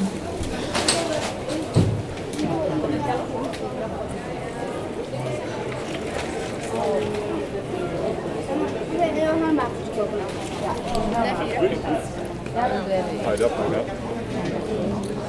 Leuven, Belgique - Cobblestones
Many old streets of Leuven are made with cobblestones. Sound of bags on it, and after, a walk inside the market place.